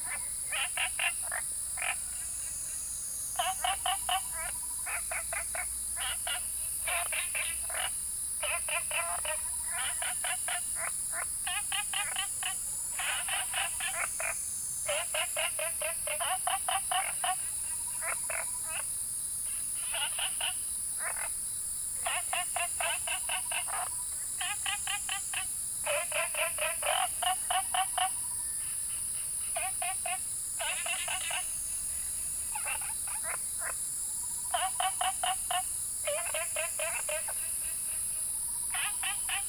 Frogs chirping, Insects called, Small ecological pool, Birds singing